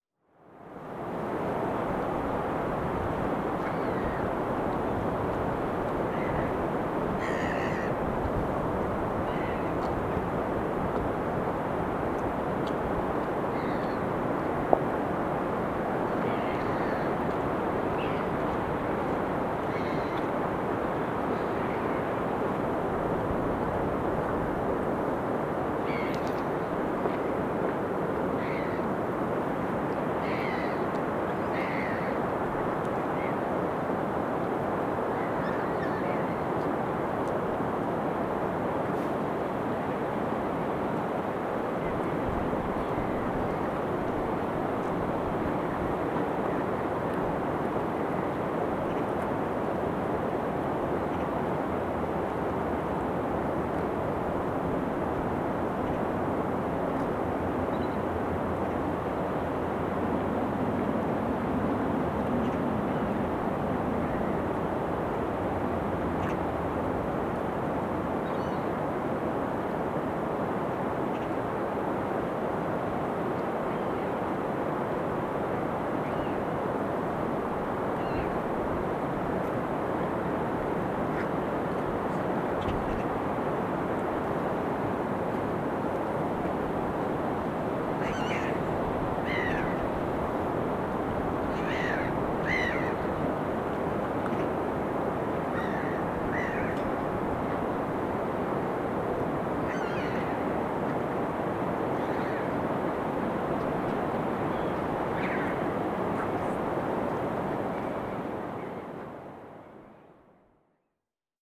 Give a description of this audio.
Early morning winter atmosphere on Karol's bridge: distant birds and blended noise. Recorded with Soundman OKM on ZoomH2n